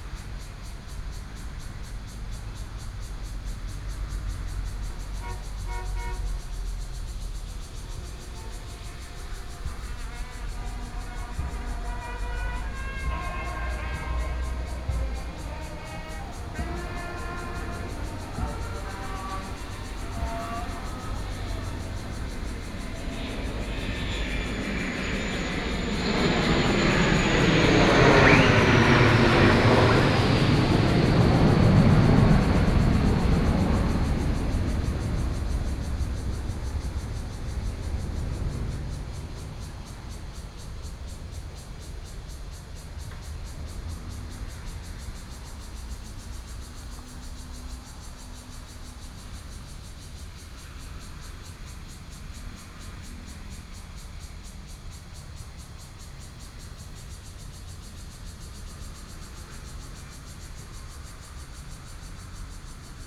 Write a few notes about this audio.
Birdsong, Cicadas sound, Traffic Sound, Dogs barking, The weather is very hot, Fighter flying through, Binaural recordings